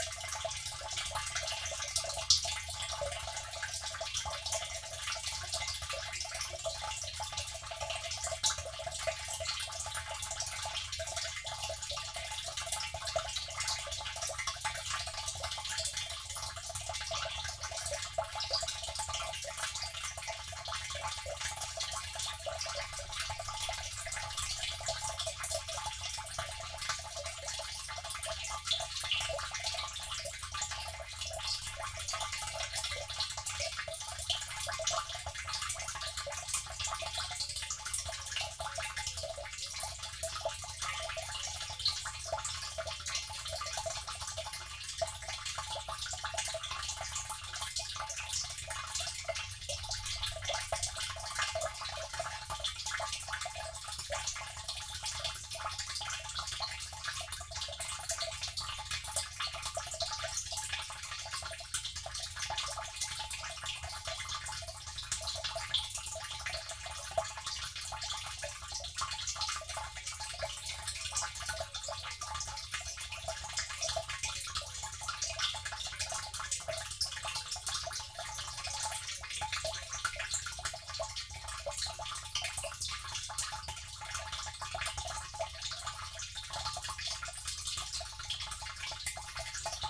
Luttons, UK - water butt filling ...
water butt filling ... dpa 4060s on pegs to Zoom H5 ... one water butt connected to another ...